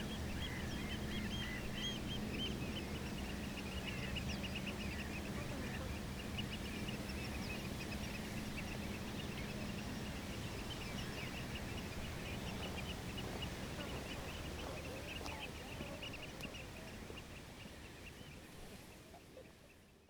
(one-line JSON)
{"title": "workum: bird sanctuary - the city, the country & me: wind-blown reed", "date": "2013-06-25 16:03:00", "description": "reed bends in the wind, singing and crying birds\nthe city, the country & me: june 25, 2013", "latitude": "52.97", "longitude": "5.41", "timezone": "Europe/Amsterdam"}